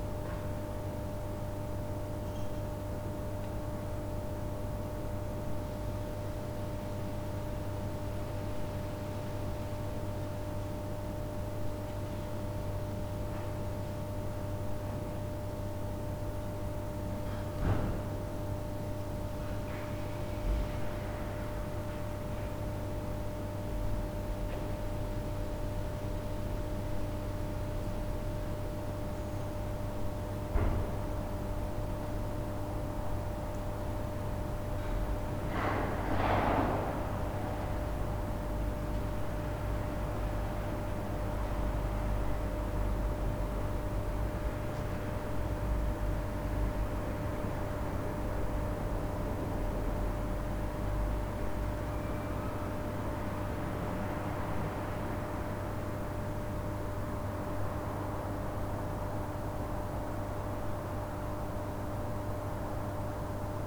{"title": "berlin, friedelstraße: stromkasten - the city, the country & me: electrical pillar box", "date": "2011-10-26 03:19:00", "description": "the city, the country & me: october 26, 2011", "latitude": "52.49", "longitude": "13.43", "altitude": "46", "timezone": "Europe/Berlin"}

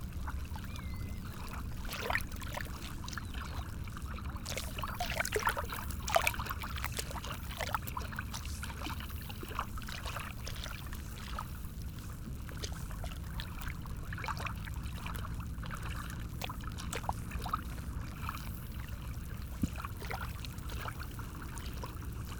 {"title": "LAiguillon-sur-Mer, France - The sea", "date": "2018-05-24 08:30:00", "description": "Recording of the sea at the end of the Pointe d'Arçay, a sandy jetty.", "latitude": "46.28", "longitude": "-1.27", "timezone": "Europe/Paris"}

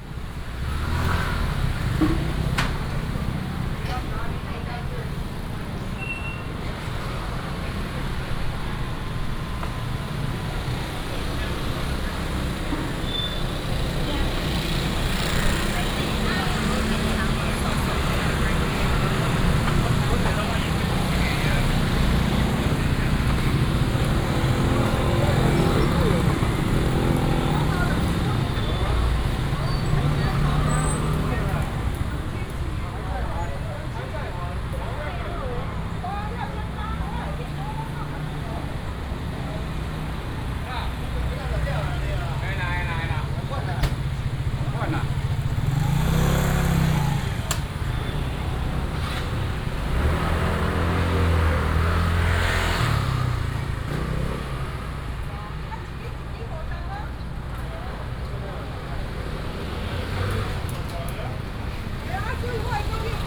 {"title": "Gonghe Rd., Chiayi City - Walking through the traditional market", "date": "2017-04-18 09:50:00", "description": "Walking through the traditional market, Traffic sound, Many motorcycles", "latitude": "23.48", "longitude": "120.46", "altitude": "42", "timezone": "Asia/Taipei"}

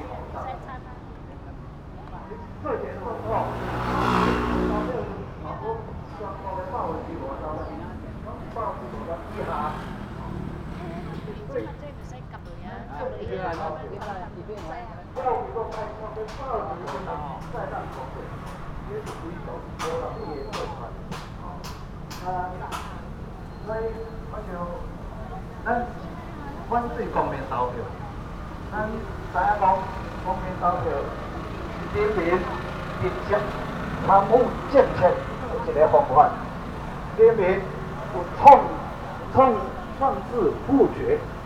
{"title": "Legislative Yuan - Protest", "date": "2013-08-05 15:38:00", "description": "Protest, Speech, Sony PCM D50 + Soundman OKM II", "latitude": "25.04", "longitude": "121.52", "altitude": "11", "timezone": "Asia/Taipei"}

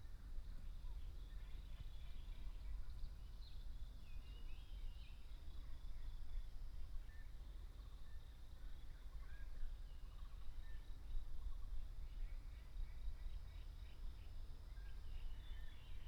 {"title": "草楠濕地, Puli Township, Nantou County - In wetlands", "date": "2016-03-27 08:30:00", "description": "in the wetlands, Bird sounds", "latitude": "23.95", "longitude": "120.91", "altitude": "584", "timezone": "Asia/Taipei"}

